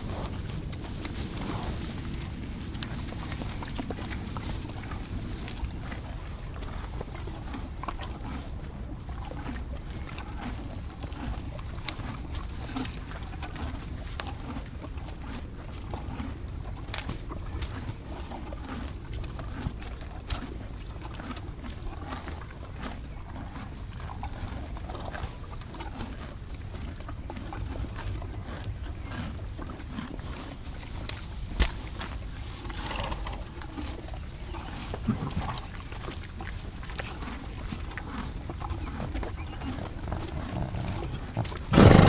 In the all-animals-eating collection, this time is the horses turn. We are here in a pleasant landscape. Horses are slowly eating grass. I come with sweet young green grass and I give it to them. A studhorse is particularly agressive, he chases the others. Regularly, this studhorse sniffs me, and looks me as an intruder. At the end, he fights another horse.
3 June 2016, 20:10